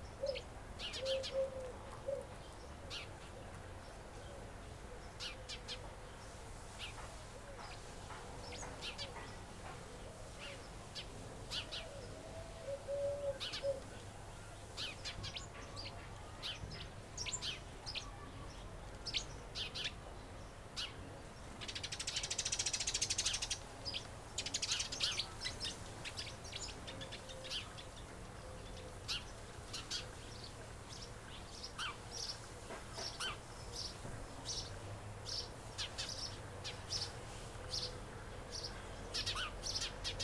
Επαρ.Οδ. Φιλώτας - Άρνισσα, Αντίγονος 530 70, Ελλάδα - Birds
Record by: Alexandros Hadjitimotheou